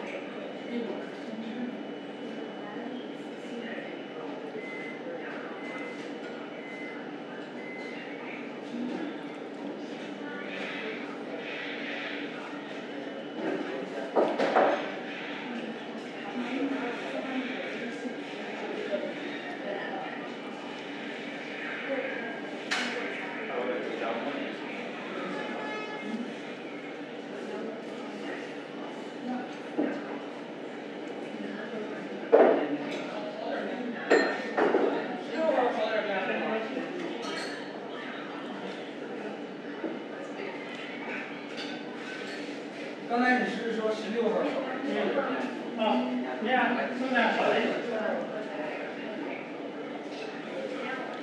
Dinner at the Apang Noodles Bar，Chunxiulu street - Sound diary 20210921
北京市, 中国